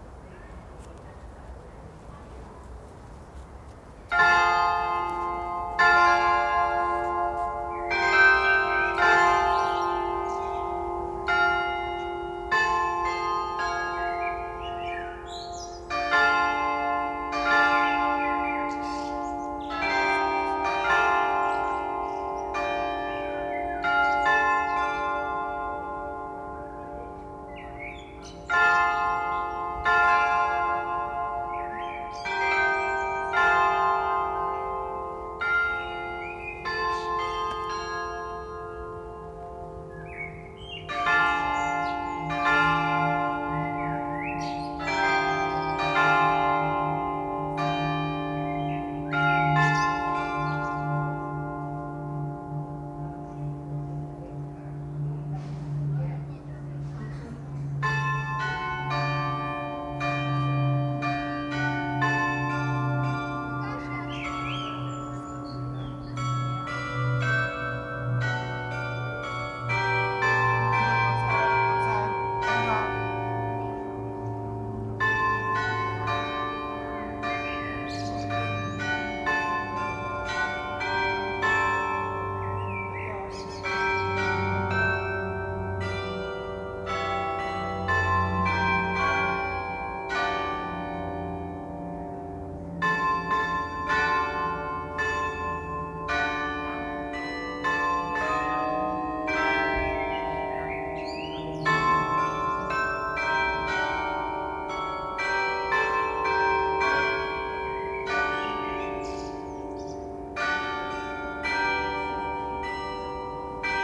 Štulcova, Praha, Czechia - Vysehrad carillon Blackbird song and passing plane
I particularly like the passing propeller plane in this recording. It creates a drone that moves in and out of tune with the carillon bells.
7 May 2008, 15:00